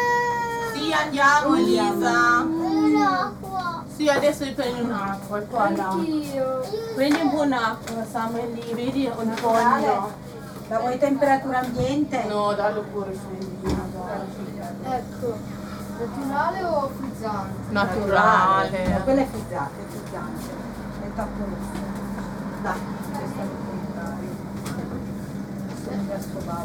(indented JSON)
{
  "title": "Via Felice Cavallotti, Massa MS, Italia - La bottega",
  "date": "2017-08-10 11:52:00",
  "description": "Una bambina ha bisogno di qualcosa per le punture delle zanzare.",
  "latitude": "44.04",
  "longitude": "10.14",
  "altitude": "64",
  "timezone": "Europe/Rome"
}